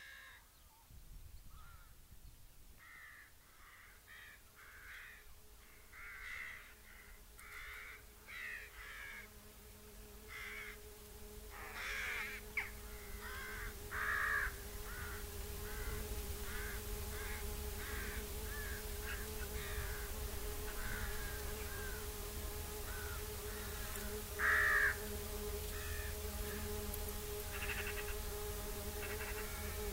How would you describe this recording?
end of 'soundwalk' with binaurals from end of West Bay path, amusement hall at caravan park, water-gate into harbour from the bridge.